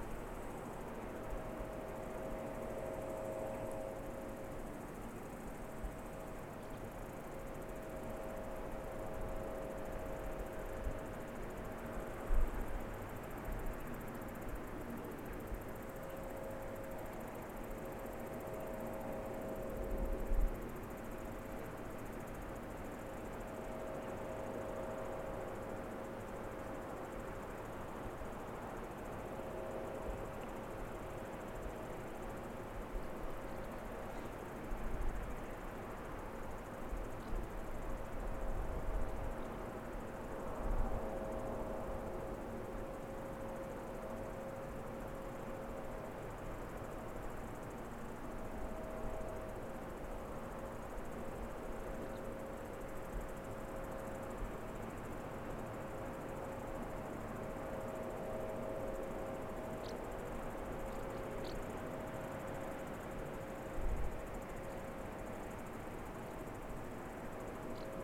Barragem, Salto do Castro, Paradela - Paradela, Barragem, Salto do Castro
Salto do Castro com som da barragem. Aqui o Douro entra pela primeira vez em Portugal. Mapa Sonoro do Rio Douro The general soundscape with the sound of the Power Plant. Here the Douro enters the Portuguese territory for the first time. Douro River Sound Map.